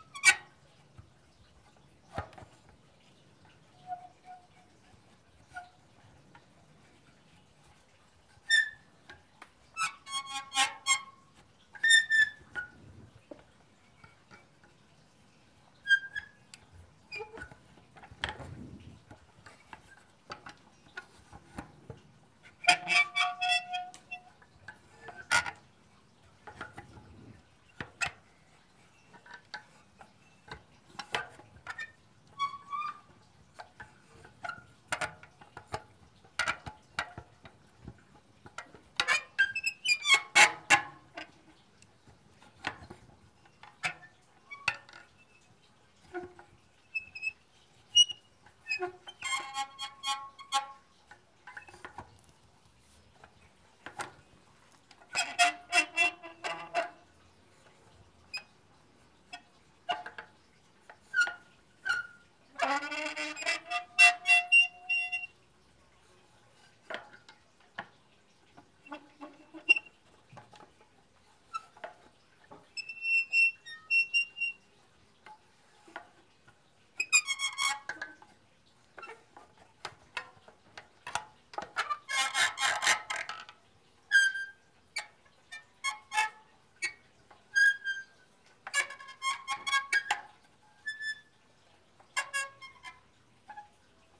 a short solo with a rusty, seizing gate handle.
Rheinfelden, Germany, 14 September 2014, 11:59